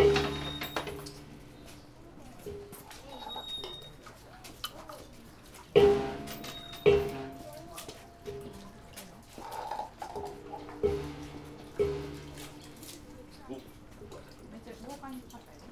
Osaka, Tennōji district, Shitennoji Temple area - shrine procedure
shrine visitors throwing coins, ringing a bell and splashing a holly statue with water.
31 March 2013, 11:27